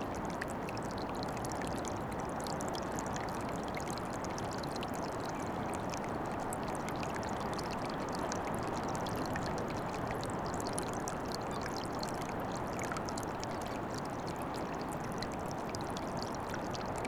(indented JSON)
{
  "title": "Whitby, UK - waterfall ette ...",
  "date": "2014-06-26 10:25:00",
  "description": "Water running over small ledge into rock pool ... under Whitby East Cliffs ... open lavalier mics on mini tripod ... bird calls from ... herring gull ... fulmar ...",
  "latitude": "54.49",
  "longitude": "-0.61",
  "altitude": "1",
  "timezone": "Europe/Berlin"
}